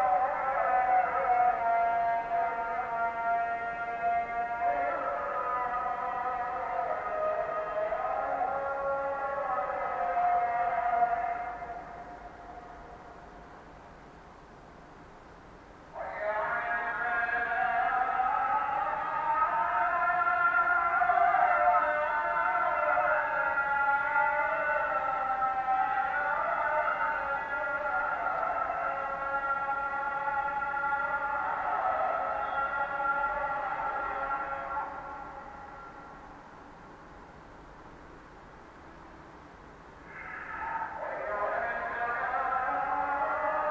{
  "title": "Dikkaldırım Mahallesi, Büklüm Cd., Osmangazi/Bursa, Turkey - morning prayer",
  "date": "2017-07-16 04:48:00",
  "description": "waking up with a special morning prayer.",
  "latitude": "40.21",
  "longitude": "29.02",
  "altitude": "159",
  "timezone": "Europe/Istanbul"
}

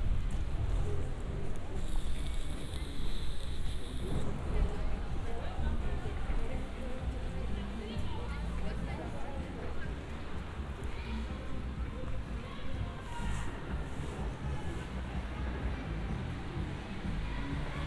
{
  "title": "U Friedrich-Wilhelm-Pl (Bln) [Bus Schmiljanstr.], Berlin, Allemagne - New Year Eve Firework build-up",
  "date": "2021-12-31 22:30:00",
  "description": "Walking in the streets of Friedenau on New Year Eve, fireworks have already started here and there, few people in the streets, angry policeman (Roland R-07+CS-10EM)",
  "latitude": "52.47",
  "longitude": "13.33",
  "altitude": "47",
  "timezone": "Europe/Berlin"
}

